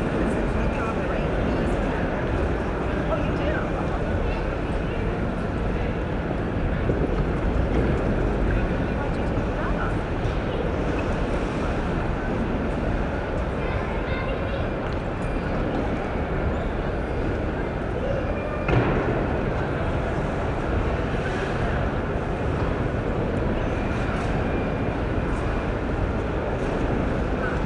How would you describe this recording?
Recorded with a pair of DPA 4060s and a Marantz PMD661